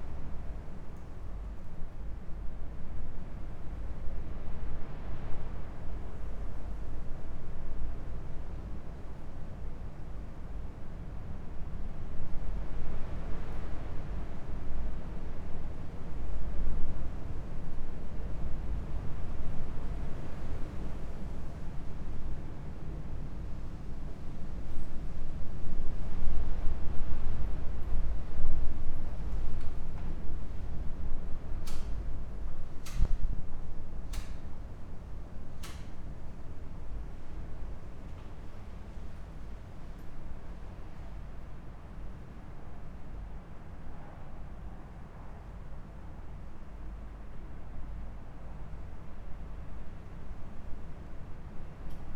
church, migojnice, slovenija - arched atrium
night sonic scape, full moon, strong wind all around, from within the atrium
Griže, Slovenia, 15 February 2014, 23:16